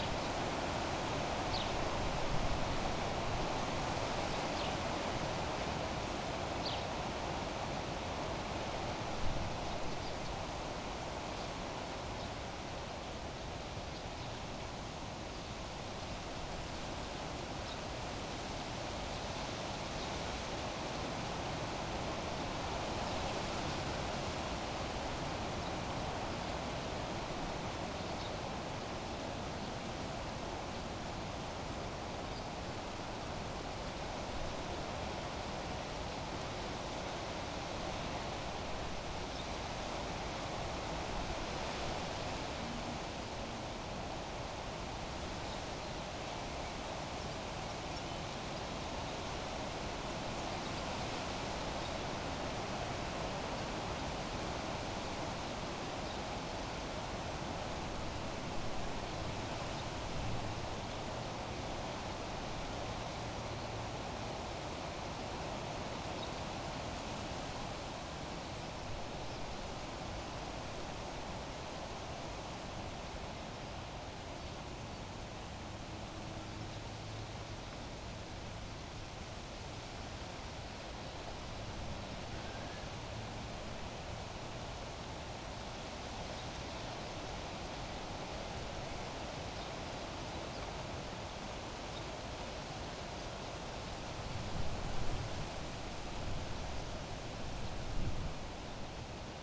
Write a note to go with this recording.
a warm and windy day at the poplars. this recording can only give a glimpse on how the trees sounded. hitting the limits of the internal mics of the recorder. (Sony PCM D50)